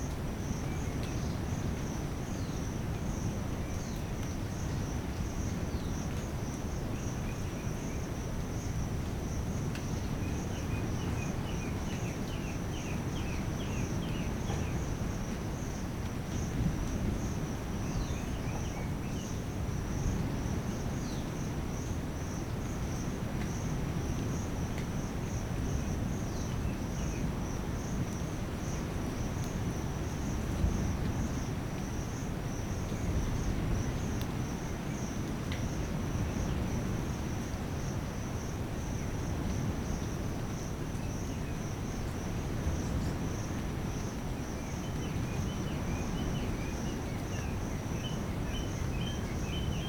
Mahale NP, Tanzania - ambiant birds and waves
Recorded on Mini-disc (back in the day!)